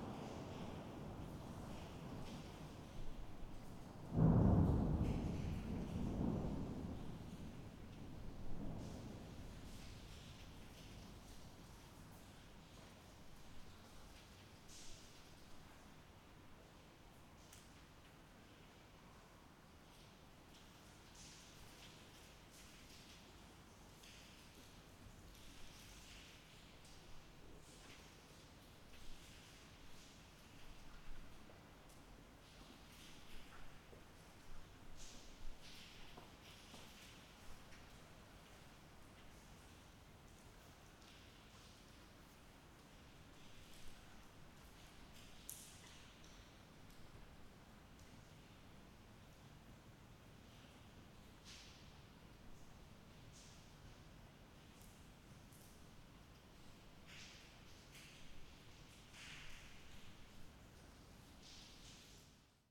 lipari, s.bartolomeo - thunder and rain
thunderstorm, seeking shelter in the cathedral
Lipari ME, Italy, 19 October 2009